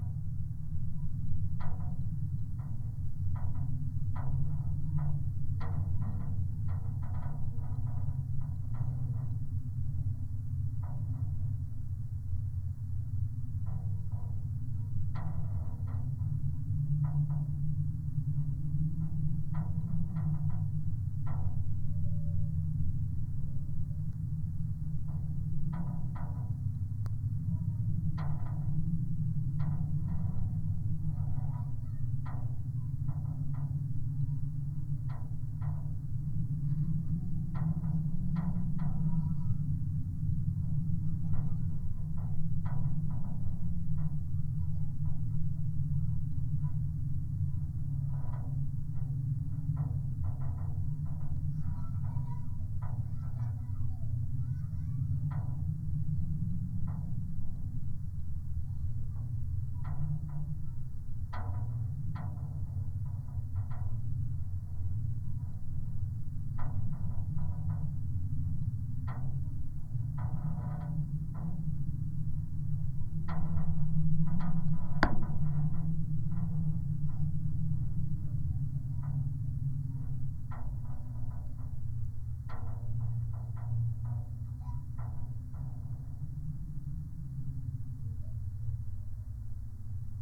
Suvernai, Lithuania, yacht's fence
fences on yacht. recorded with contact microphone